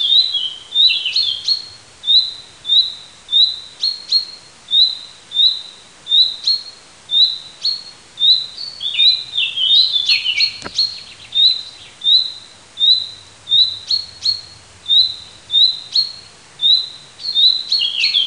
fringuello nel parco del roccolo (giugno 2003)
Parabiago Milan, Italy, 15 February 2011